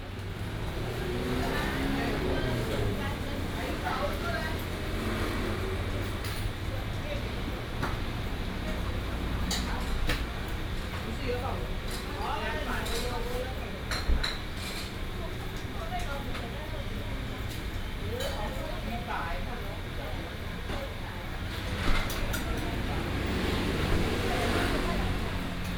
嘉義第一名火雞肉飯, 前金區Kaohsiung City - Turkey rice restaurant
Turkey rice restaurant, Traffic sound, birds sound
Binaural recordings, Sony PCM D100+ Soundman OKM II